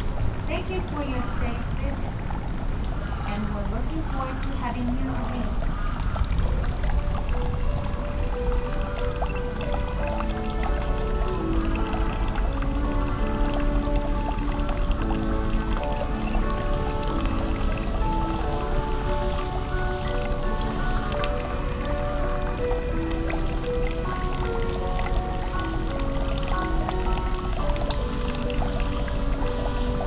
tokyo, kiyosumishirakawa garden, cicades
at a small traditionel japanese fountain, an evening closing anouncement some muzak and the sensational strong cicades in the nearby trees
international city scapes - social ambiences and topographic field recordings
27 July 2010, ~04:00